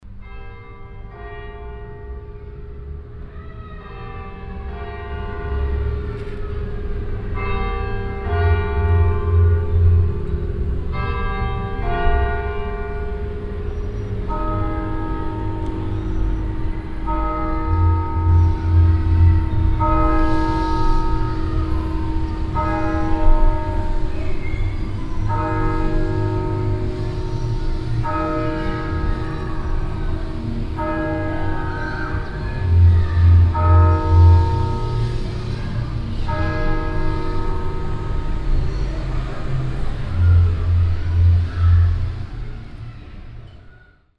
At the church of Diekirch listening to the evening bells on a windy summer evening mixed with the sonor sound of traffic from the streets nearby.
international village scapes - topographic field recordings and social ambiences
Luxembourg, 8 August 2011, ~20:00